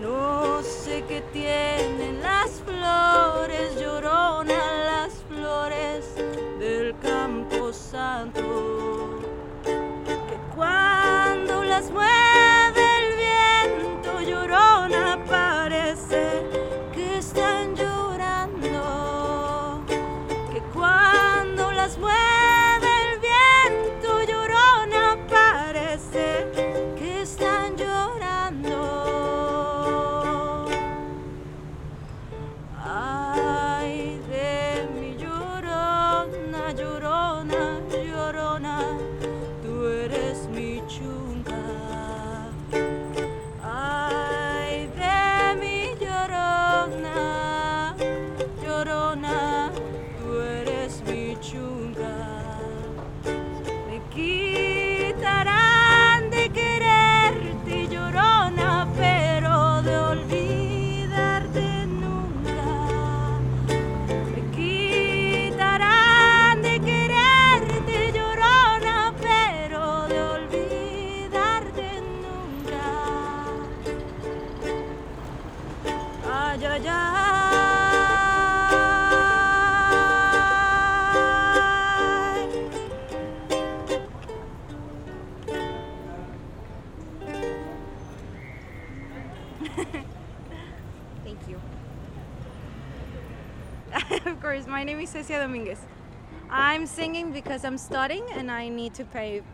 Merida - Mexique
À l'entrée du "Passage de la Révolution", quelques minutes avec Cecia Dominguez
27 October 2021, 11:30